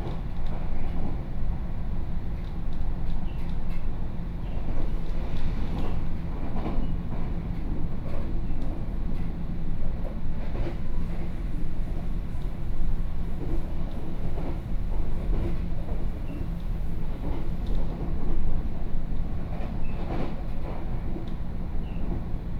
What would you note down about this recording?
from Fugang Station to Yangmei Station, Sony PCM D50+ Soundman OKM II